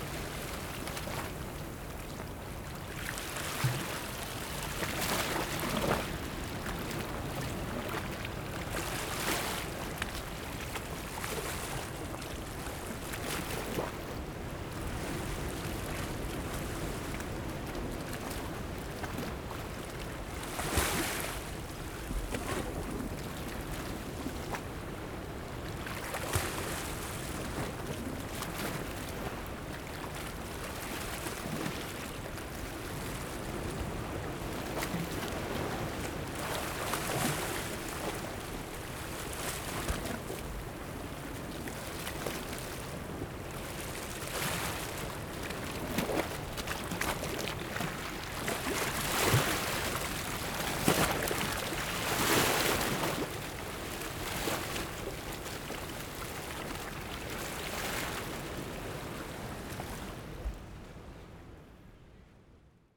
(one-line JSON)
{"title": "頭城鎮大里里, Yilan County - Sound of the waves", "date": "2014-07-21 17:03:00", "description": "Sound of the waves\nZoom H6 MS mic", "latitude": "24.95", "longitude": "121.91", "altitude": "5", "timezone": "Asia/Taipei"}